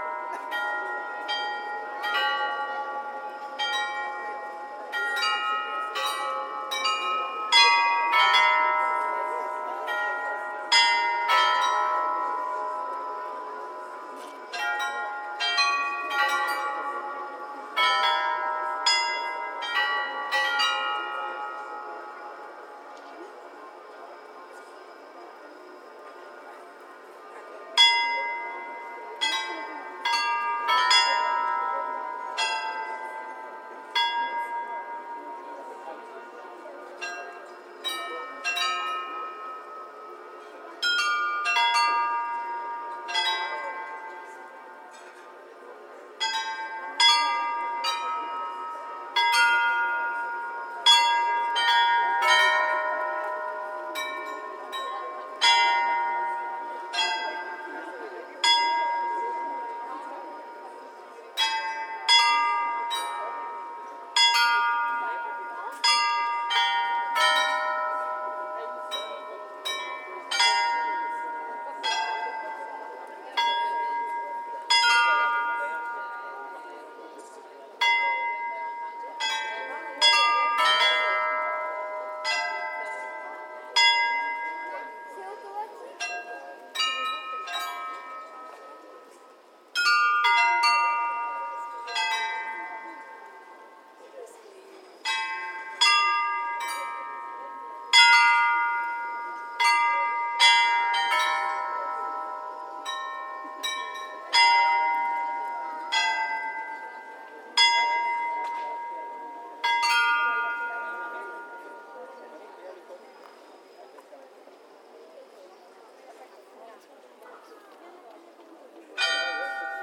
Olomouc, Czech Republic - (-131) Olomuc Astronomical Clock
Olomuc Astronomical Clock at noon recorded with Zoom H2n
sound posted by Katarzyna Trzeciak